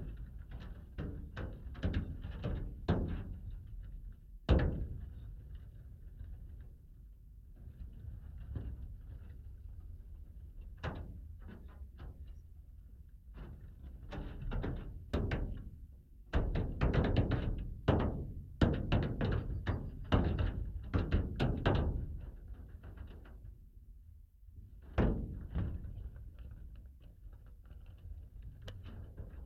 Dual contact microphone recording of a few flies stuck between a closed window and a protective anti-insect aluminium mesh, crawling and bouncing.
Lazdijų rajono savivaldybė, Alytaus apskritis, Lietuva, 8 June